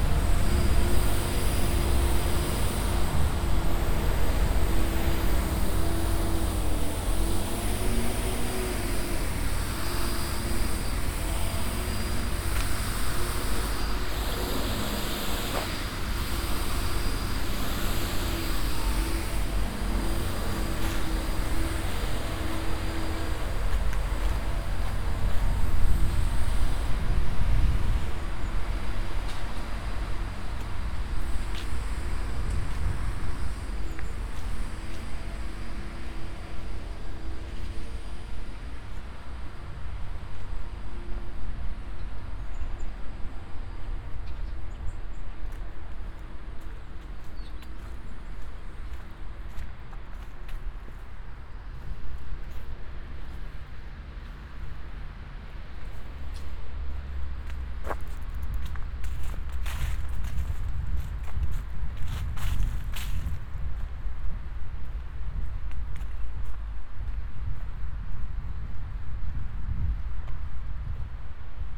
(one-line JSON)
{"title": "Cuenca, Cuenca, España - #SoundwalkingCuenca 2015-11-27 Soundwalk crossing the Júcar River, Cuenca, Spain", "date": "2015-11-27 09:35:00", "description": "A soundwalk through a park, crossing the Júcar river in Cuenca, Spain.\nLuhd binaural microphones -> Sony PCM-D100", "latitude": "40.07", "longitude": "-2.14", "altitude": "929", "timezone": "Europe/Madrid"}